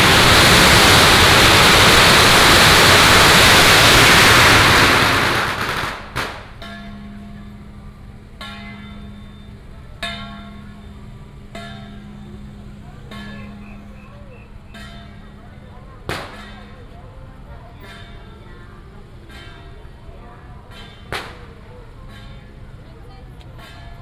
Zhongxiao Rd., Huwei Township - Matsu Pilgrimage Procession
Firecrackers and fireworks, Many people gathered at the intersection, Matsu Pilgrimage Procession